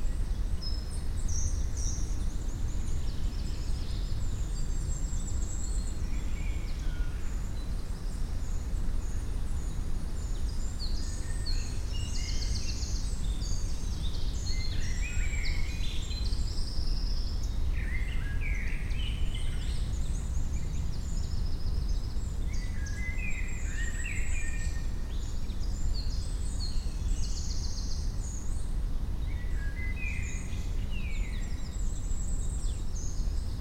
Court-St.-Étienne, Belgique - The forest
Very quiet ambience in the forest. Wind in the trees, birds, silence.